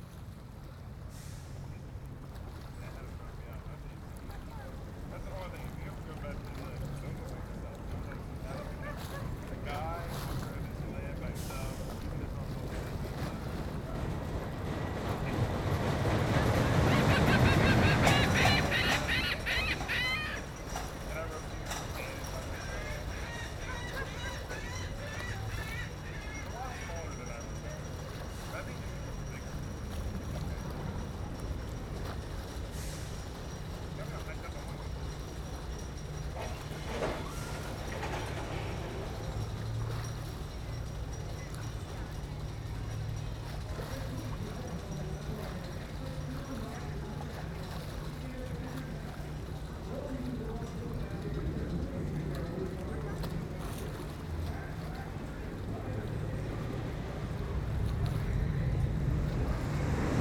Ghost Coaster, Kemah, Texas - Ghost Coaster
*Binaural recording* Amusement park, waves, seagulls, a roller coaster run with no passengers, distant boat motoring out into the bay, chatters.
CA-14 omnis > DR100 MK2
Kemah, TX, USA, 16 September, 15:35